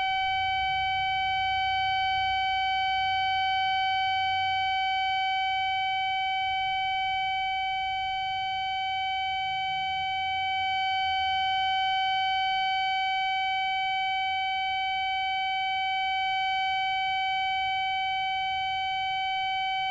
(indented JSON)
{
  "title": "berlin, sanderstraße: defekte gegensprechanlage - the city, the country & me: broken intercom system",
  "date": "2010-10-30 05:56:00",
  "description": "the city, the country & me: october 30, 2010",
  "latitude": "52.49",
  "longitude": "13.43",
  "altitude": "47",
  "timezone": "Europe/Berlin"
}